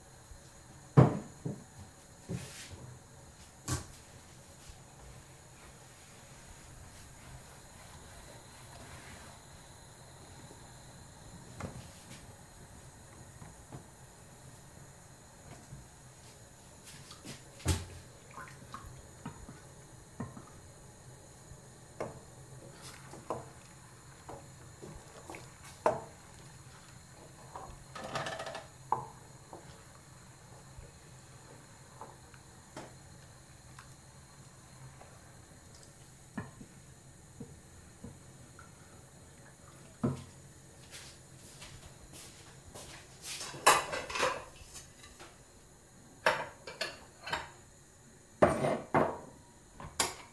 ul.Mazurska 44/46 Górna, Łódź, Polska - making vanilla pudding